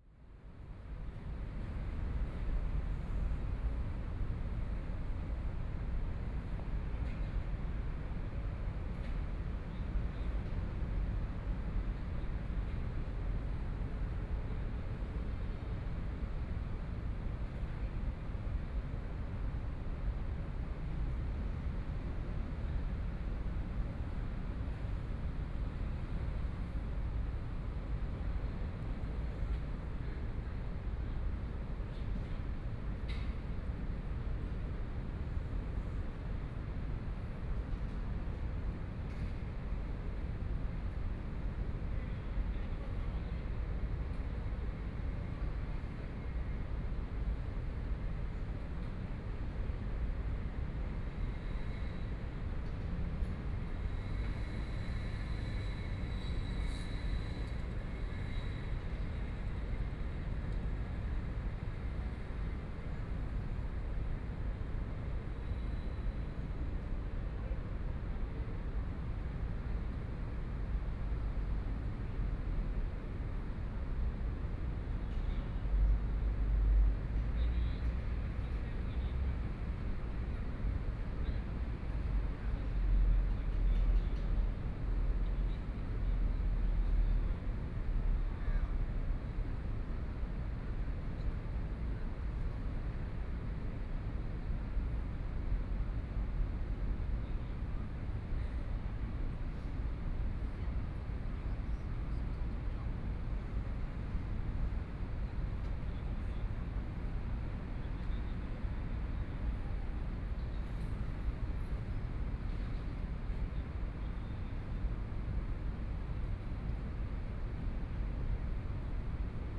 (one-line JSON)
{"title": "中山區聚盛里, Taipei City - Environmental sounds", "date": "2014-02-28 19:04:00", "description": "The park at night, Traffic Sound, Environmental sounds\nPlease turn up the volume a little\nBinaural recordings, Sony PCM D100 + Soundman OKM II", "latitude": "25.06", "longitude": "121.52", "timezone": "Asia/Taipei"}